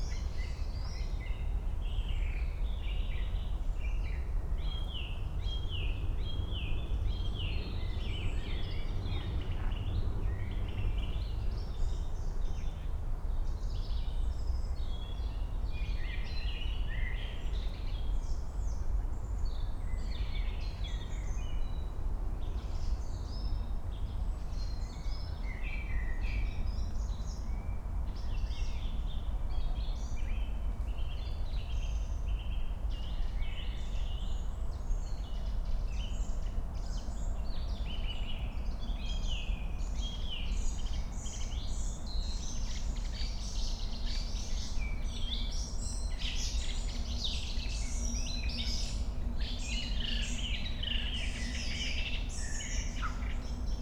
Königsheide, Berlin - evening ambience at the pond
Song thrush, voices, city drone, a frog, warm evening in early summer
(Sony PCM D50, Primo EM172)
Berlin, Germany, 25 June 2020